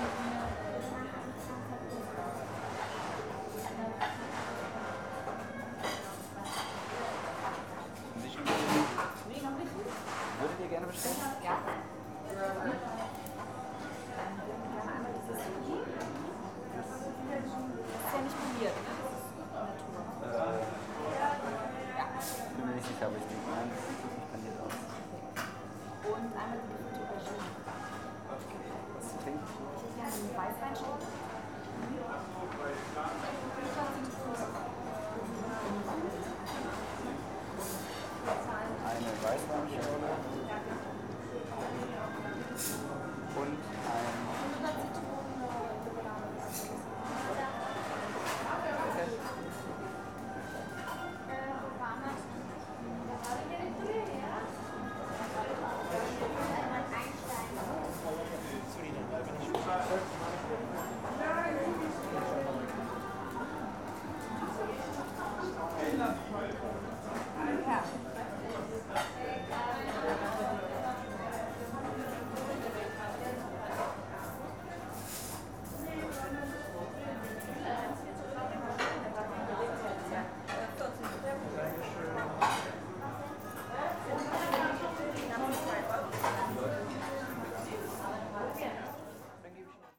Berlin, Bergmann Str, Knofi Cafe - waiting for the meal
ambience in the cafe, customers taking orders, nice music in the background, pleasant rattle of the plates and silverware
Berlin, Germany, 31 August, 13:40